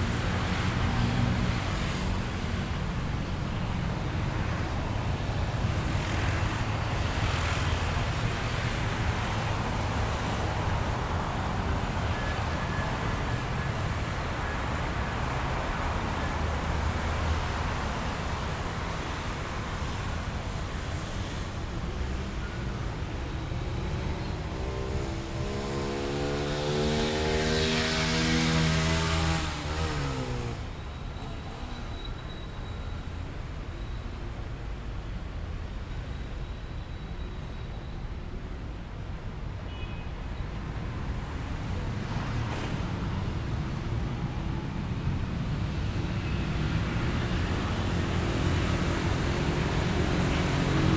Av. El Poblado, Medellín, El Poblado, Medellín, Antioquia, Colombia - Frente a la bomba de gasolina
En este paisaje se escucha el tráfico denso de la avenida el Poblado
Valle de Aburrá, Antioquia, Colombia, September 2022